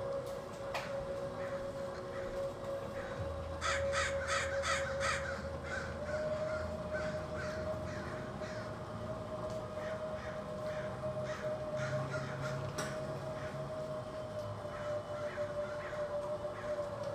West Berkeley, California USA
every single crow from hood did gather on walnut tree next to the house while I was making tee and listening K.Haino
11 October, 03:20